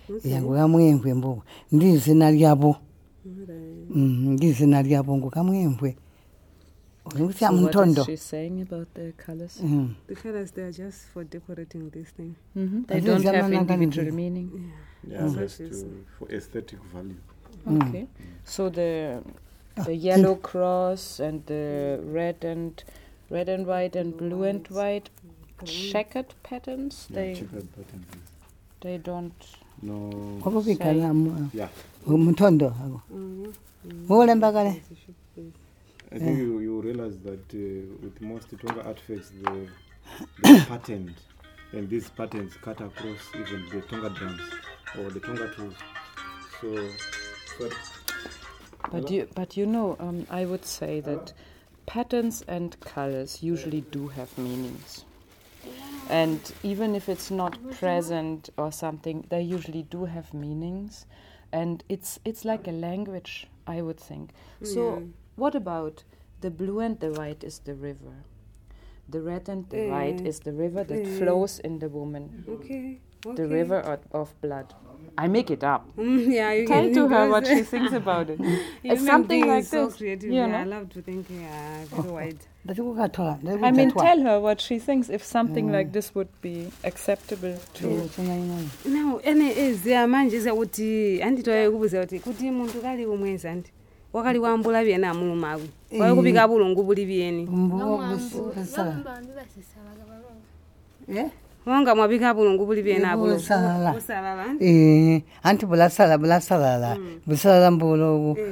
BaTonga Museum, Binga, Zimbabwe - Janet and Luyando - colours of beets...
…. during our following conversation, Janet is putting on various artifacts and parts of a bride’s beets costume while she’s explaining and telling stories of rituals and customs.… towards the end of this long real-time take, she mentions also the women’s custom of placing red beets on the bed, indicating to her husband that she’s in her menstrual cycle … (this is the image that you can hear the painter Agness Buya Yombwe in Livingstone refer to…)
(in ChiTonga with summary translations)
12 November 2012